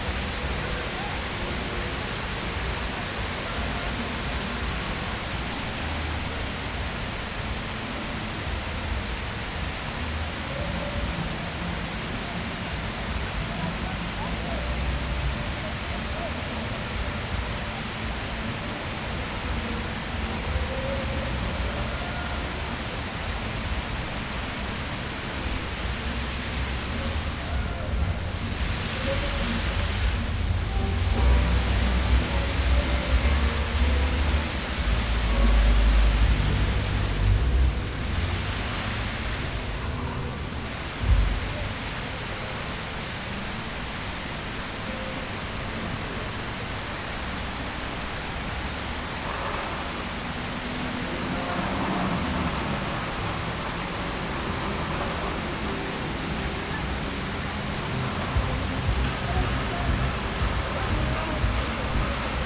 E Randolph St, Chicago, IL, USA - Street Level Fountain
Walking the length of the Aon fountain at street level looking into the sunken plaza